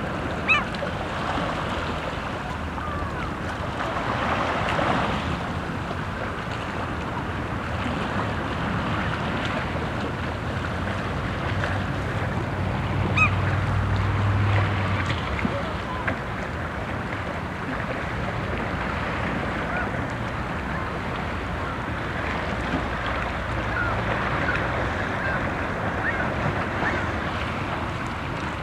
At the beach of Akresand on a mild windy summer day. The sound of water waves on the sandy beach and a seagull crying in the wind. In the distance a motor boat.
international sound scapes - topographic field recordings and social ambiences
Åkrehamn, Norway, 28 July 2012